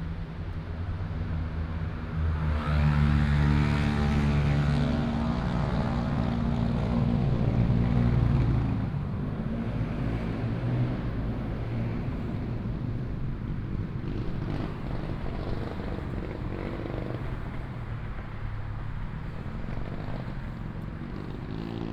普忠路, Zhongli Dist., Taoyuan City - train runs through
the train runs through, traffic sound